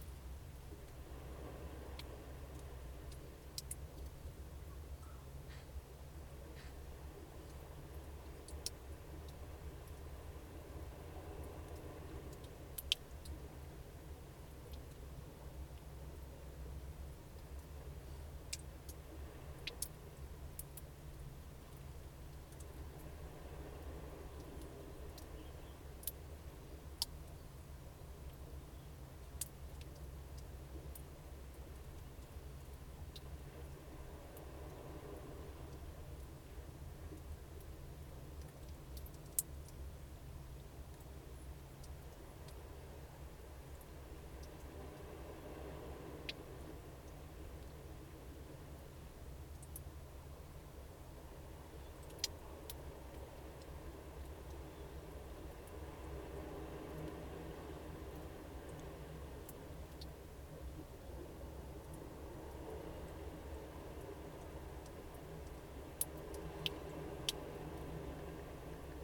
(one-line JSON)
{"title": "Kitchener Road, Takapuna, Auckland, New Zealand - water drips in lava cave", "date": "2020-08-06 19:13:00", "description": "Crouched inside a lava cave, listening to drips fall from the ceiling, amidst the Fossil Forest", "latitude": "-36.78", "longitude": "174.78", "altitude": "11", "timezone": "Pacific/Auckland"}